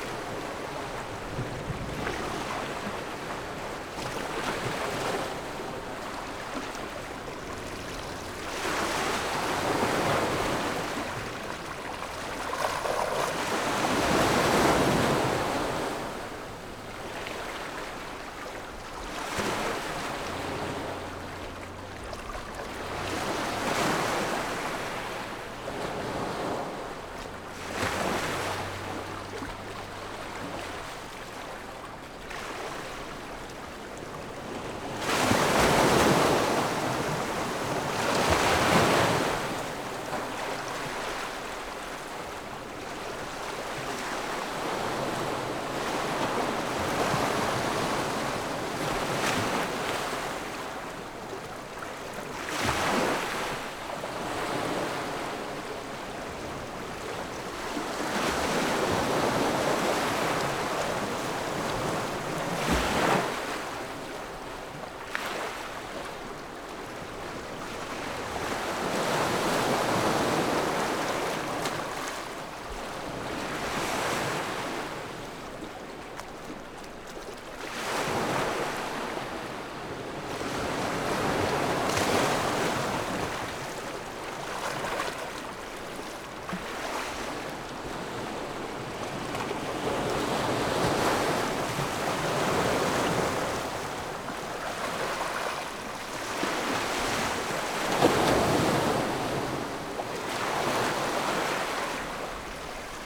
津沙村, Nangan Township - Sound of the waves
At the beach, Sound of the waves
Zoom H6 +Rode NT4
連江縣, 福建省, Mainland - Taiwan Border, October 2014